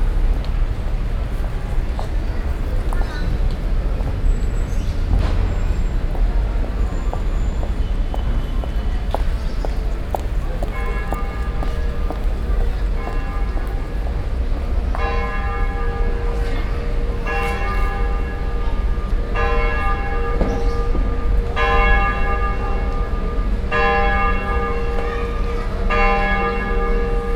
Brussels, Parvis de Saint-Gilles, the bells
Saint-Gilles, Belgium, 28 September 2011